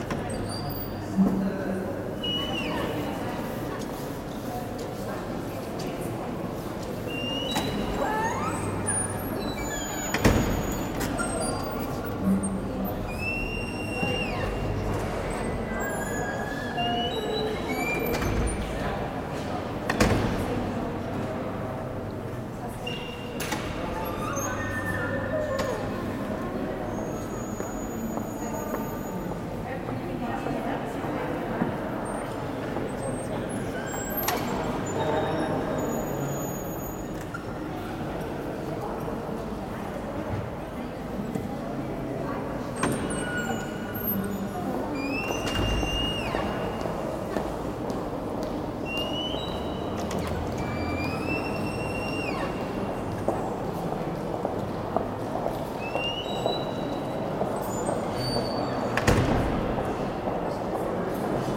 St. Gallen (CH), main station hall, sqeaking doors
half automatic entrance doors, huge swing doors made from wood, sqeaking. recorded june 16, 2008. - project: "hasenbrot - a private sound diary"